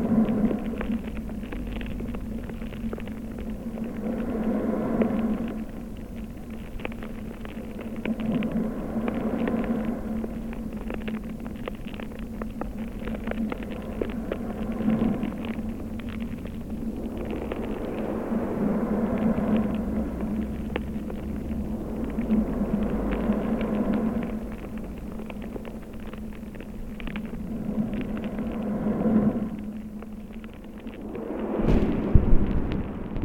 Galatas, Crete, hydrophone in the sand
hydrophone half buried in the sand at the sea
Galatas, Greece